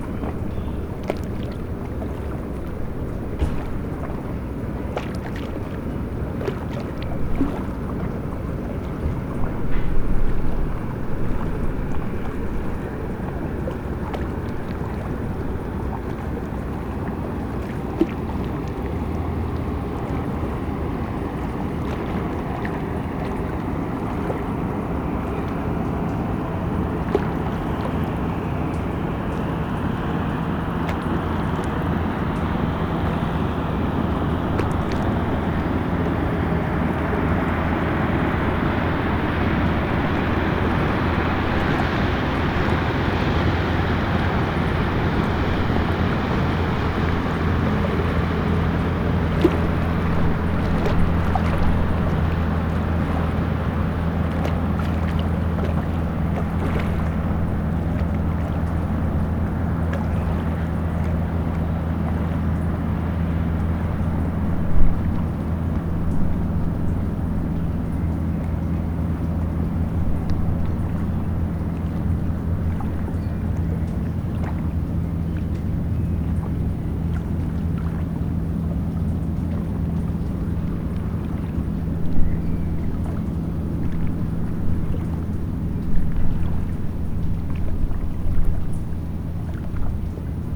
{"title": "Gmunden, Traunsteinstrasse, Österreich - evening on the shore of traunsee", "date": "2014-06-20 18:50:00", "description": "sitting st the shore of Traunsee.", "latitude": "47.88", "longitude": "13.81", "altitude": "438", "timezone": "Europe/Vienna"}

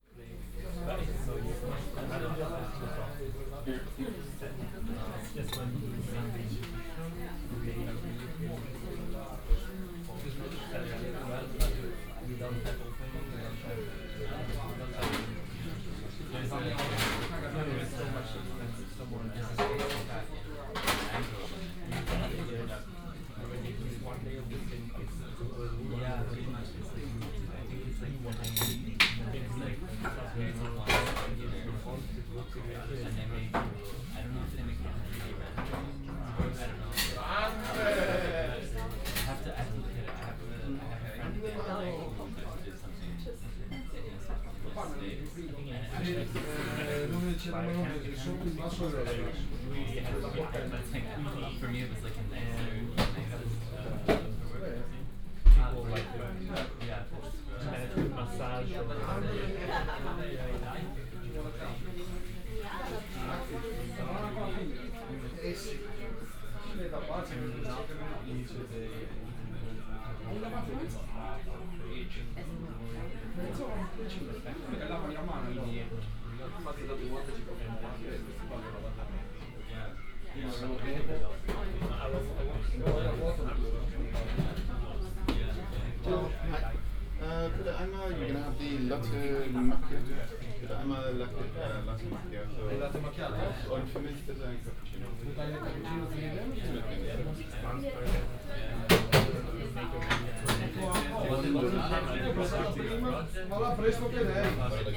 {"title": "Kottbusser Damm, Kreuzberg, Berlin - italian Cafe Bar ambience", "date": "2015-12-31 16:15:00", "description": "coffee break at one of the most italian cafe bar in town...\n(Sony PCM D50, OKM2)", "latitude": "52.50", "longitude": "13.42", "altitude": "38", "timezone": "Europe/Berlin"}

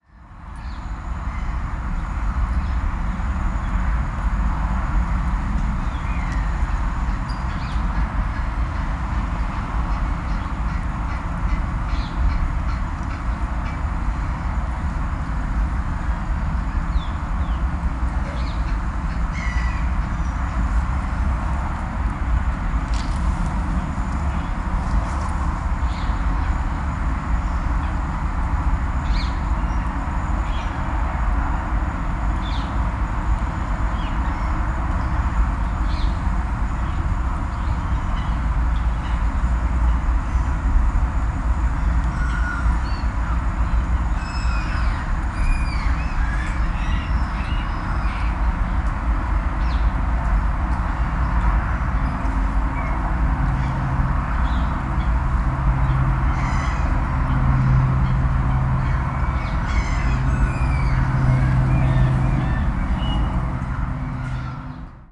{"title": "Thamesmead, UK - Southmere 1", "date": "2017-02-11 12:45:00", "description": "Recorded with a stereo pair of DPA 4060s and a Marantz PMD 661", "latitude": "51.50", "longitude": "0.13", "altitude": "1", "timezone": "Europe/Berlin"}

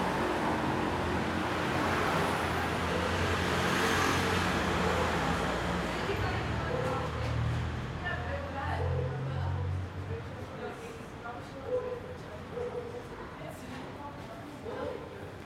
{"title": "Agias Theklas, Athina, Grèce - Small street Athens", "date": "2019-08-16 09:20:00", "latitude": "37.98", "longitude": "23.73", "altitude": "78", "timezone": "Europe/Athens"}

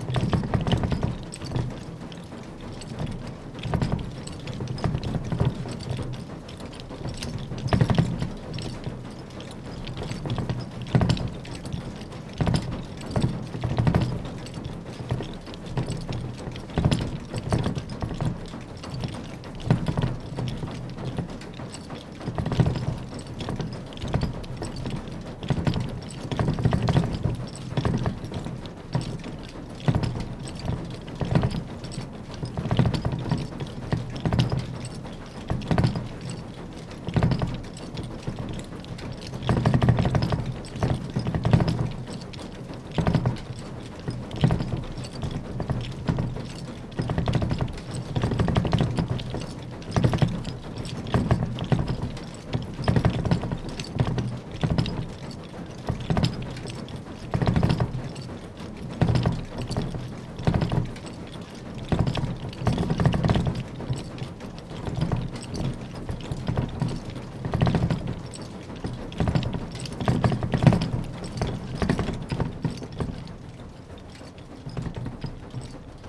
{"title": "enscherange, rackesmillen, belt drive - enscherange, rackesmillen, flour mixer", "date": "2011-09-23 21:22:00", "description": "At the roof level of the old mill. The outer sound of the flour mixer.\nIm Dachgeschoß der Mühle. Eine Aufnahme des Mehlmischersgehäuses.\nÀ l’intérieur du moulin historique, dans une salle au rez-de-chaussée, directement derrière la roue à aubes du moulin. Le son de la courroie qui tourne avec un déséquilibre voulu pour faire fonctionner le mécanisme au premier étage.", "latitude": "50.00", "longitude": "5.99", "altitude": "312", "timezone": "Europe/Luxembourg"}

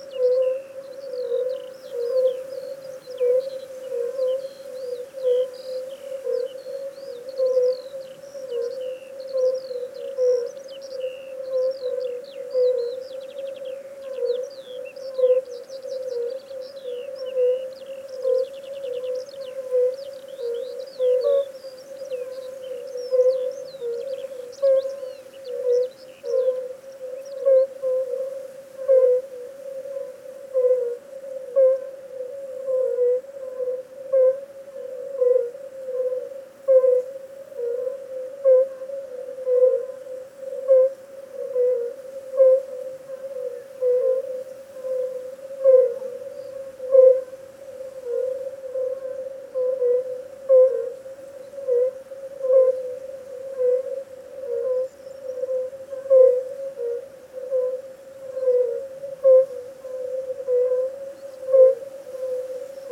{"title": "Benesti, Romania - Toads singing during the afternoon, in a field in Romania", "date": "2018-07-10 17:00:00", "description": "During the afternoon in a field close to the small village of Benesti, some toads are singing, accompanied by a light wind and some birds.\nRecording by an ORTF Setup Schoeps CCM4 microphones in a Cinela Suspension ORTF. Recorded on a Sound Devices 633.\nSound Reference: RO-180710T05\nGPS: 44.662814, 23.917906\nRecorded during a residency by Semisilent semisilent.ro/", "latitude": "44.66", "longitude": "23.92", "altitude": "195", "timezone": "Europe/Bucharest"}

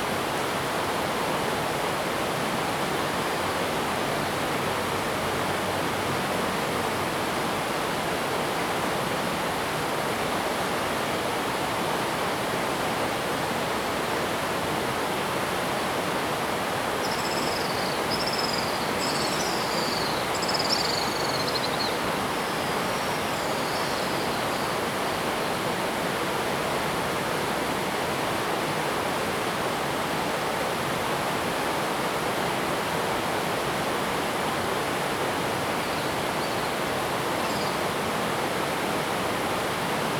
{"title": "埔里鎮桃米里, Nantou County - Swallow sounds", "date": "2015-08-13 06:07:00", "description": "Swallow sounds, Traffic Sound, The sound of water streams\nZoom H2n MS+XY", "latitude": "23.94", "longitude": "120.93", "altitude": "464", "timezone": "Asia/Taipei"}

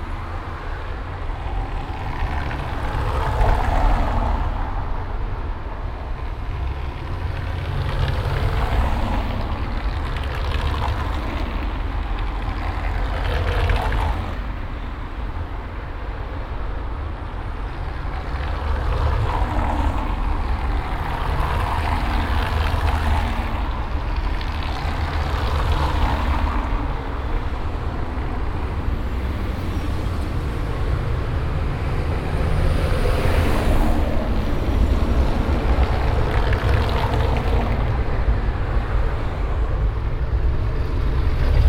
{"title": "dresden, königsbrücker straße, traffic on cobble stone street", "date": "2009-06-16 12:59:00", "description": "traffic passing by on a half asphalt and cobble stone street\nsoundmap d: social ambiences/ in & outdoor topographic field recordings", "latitude": "51.07", "longitude": "13.75", "altitude": "116", "timezone": "Europe/Berlin"}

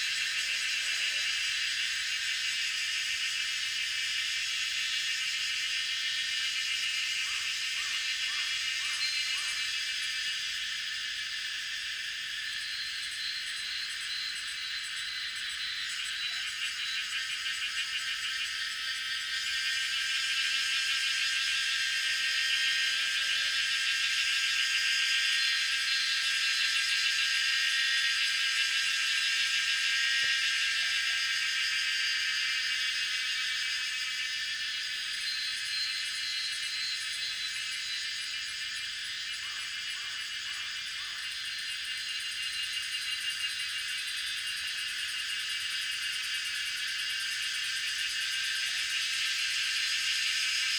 {"title": "三角崙, 埔里鎮, Taiwan - In the woods", "date": "2016-07-12 17:59:00", "description": "In the woods, Cicadas sound\nZoom H2n MS+XY", "latitude": "23.93", "longitude": "120.90", "altitude": "753", "timezone": "Asia/Taipei"}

